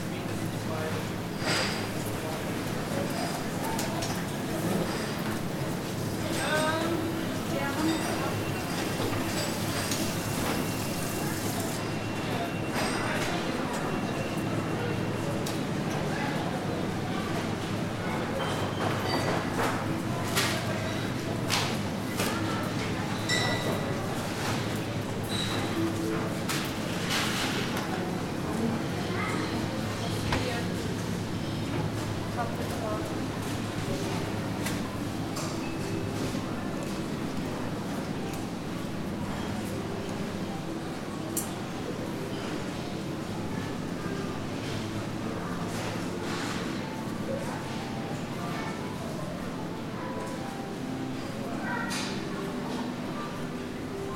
Suchsdorf, Kiel, Deutschland - Supermarket
Walking though the aisles of a supermarket, different sounds, people, bone saw at the butcher
iPhone 6s plus with Shure MV88 microphone
4 March, 16:57